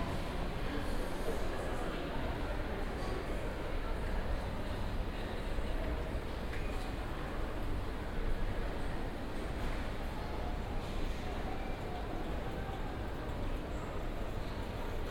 lisbon, airport - soundwalk
soundwalk through different parts and levels of lisbon airport. walks starts at the entrance 1st floor and ends in parking area.
binaural, use headphones.